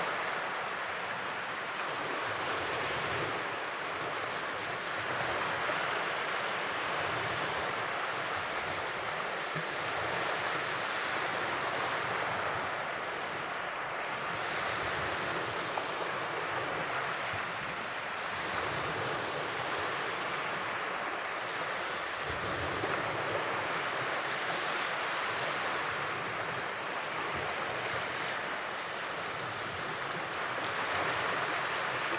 waves of Nida, the sea beyond the hill
waves of Nida water and sea sounds
3 November 2011, ~16:00